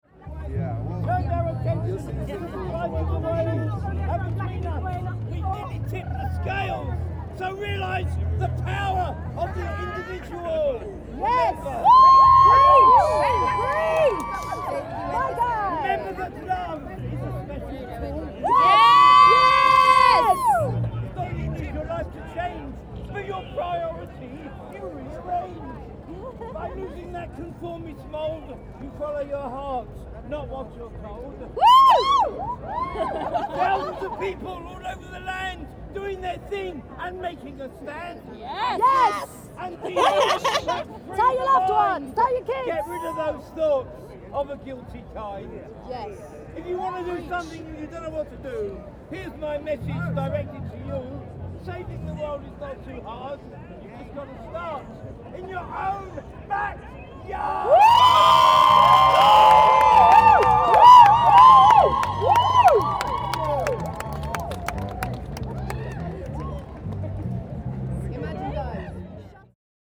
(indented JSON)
{
  "title": "Oxford Circus Underground Station, Oxford St, Soho, London, UK - Extinction Rebellion: end of poem declaimed by the green man",
  "date": "2019-04-12 18:57:00",
  "description": "Lots of colourful people and performances took place as the extinction rebels block off Oxford Circus for their climate justice fashion show.",
  "latitude": "51.52",
  "longitude": "-0.14",
  "altitude": "28",
  "timezone": "Europe/London"
}